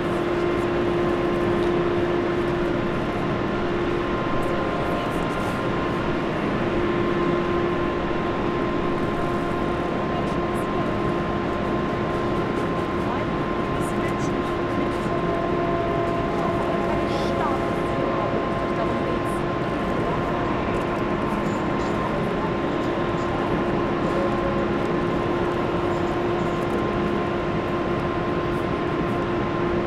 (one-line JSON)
{"title": "Frankfurt (Main) Hauptbahnhof, Gleiszugang - 24. April 2020, Gleis 9", "date": "2020-04-24 15:40:00", "description": "At track 9 again... the lockdown stopped four days ago... I hope it is audible that there are much more people and more also coming closer to the microphone. The station is more busy again. A beggar is asking what I am measuring.", "latitude": "50.11", "longitude": "8.66", "altitude": "115", "timezone": "Europe/Berlin"}